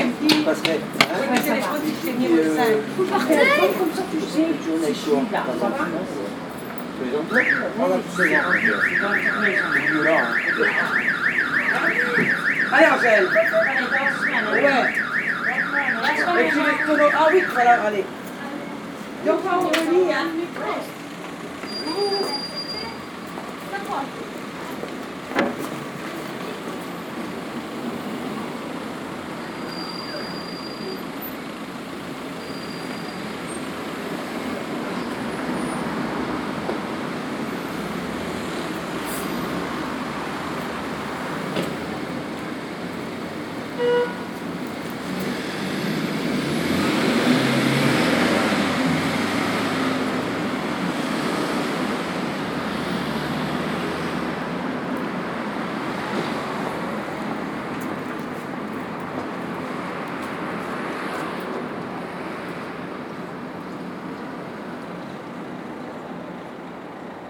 {"title": "Brussels, Bordet Hospital", "date": "2011-03-25 14:57:00", "description": "Brussels, conversation near the Bordet Hospital.\nConversation aux abords de l'hôpital Bordet.", "latitude": "50.83", "longitude": "4.35", "altitude": "48", "timezone": "Europe/Brussels"}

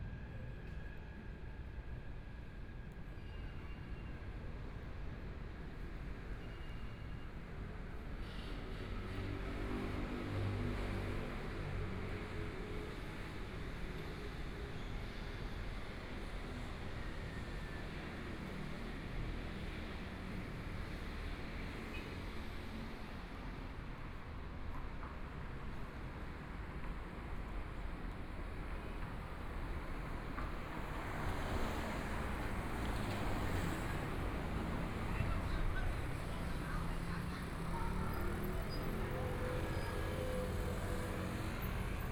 {"title": "Xinbeitou Branch Line, Taipei - Walking beneath the track", "date": "2014-02-10 20:35:00", "description": "Walking beneath the track, from MRT station, Traffic Sound, Motorcycle Sound, Trains traveling through, Clammy cloudy, Binaural recordings, Zoom H4n+ Soundman OKM II", "latitude": "25.13", "longitude": "121.50", "timezone": "Asia/Taipei"}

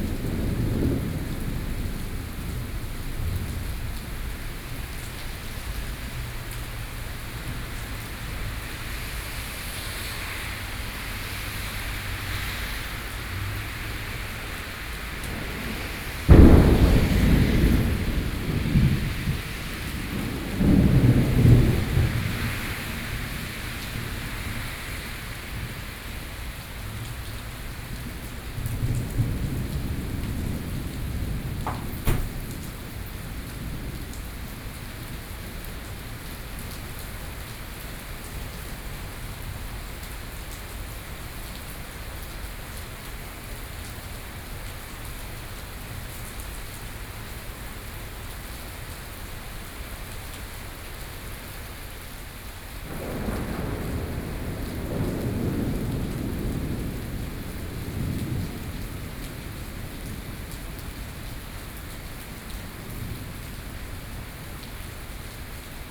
{"title": "Taipei, Taiwan - Thunderstorm", "date": "2013-06-23 16:03:00", "description": "Thunderstorm, Sony PCM D50 + Soundman OKM II", "latitude": "25.05", "longitude": "121.52", "altitude": "24", "timezone": "Asia/Taipei"}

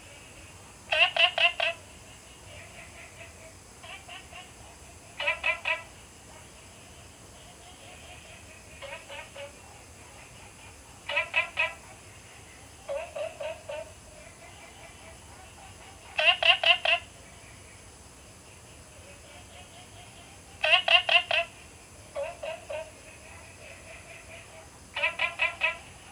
{"title": "青蛙ㄚ 婆的家, Puli Township - In Bed and Breakfasts", "date": "2015-09-03 20:48:00", "description": "Frog calls, Insect sounds\nZoom H2n MS+XY", "latitude": "23.94", "longitude": "120.94", "altitude": "463", "timezone": "Asia/Taipei"}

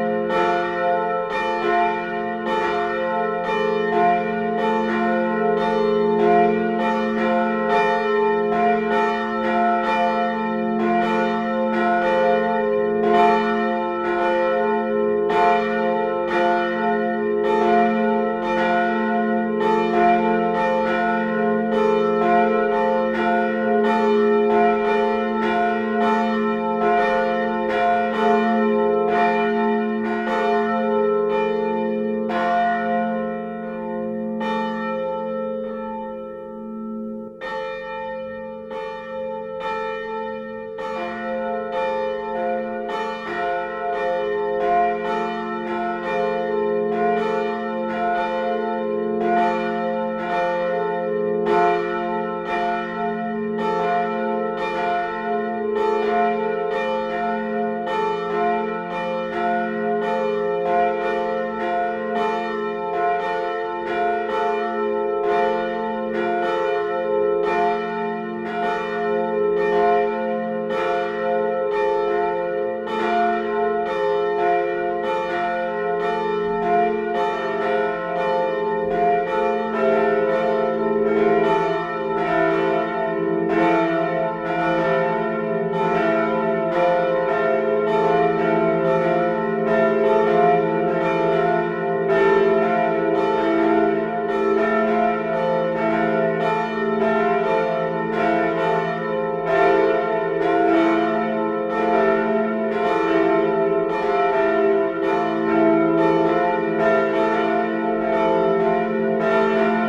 {"title": "Lessines, Belgique - Lessines bells", "date": "2014-06-14 14:25:00", "description": "Manual ringing of the three bells of the Lessines church.", "latitude": "50.71", "longitude": "3.83", "altitude": "29", "timezone": "Europe/Brussels"}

